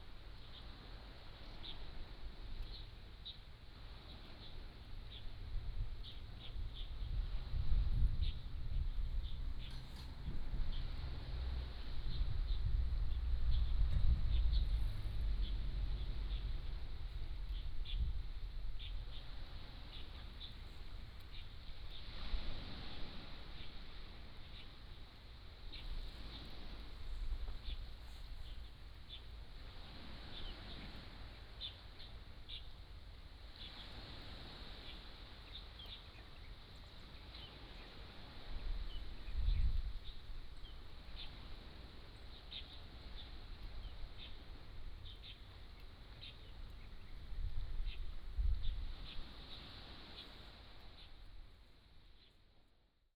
白馬文武尊王廟, Nangan Township - In front of the small temple
Birdsong, Sound of the waves
連江縣, 福建省 (Fujian), Mainland - Taiwan Border, 14 October 2014, 11:45